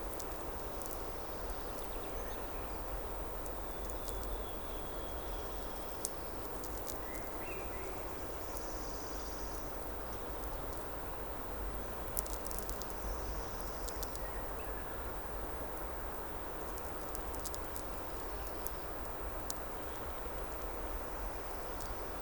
Utena, Lithuania, electro-sonic forest
electro-sonic atmospheres in a forest. captured with conventional microphones and electromagnetic listening antenna Priezor
3 May 2018, ~16:00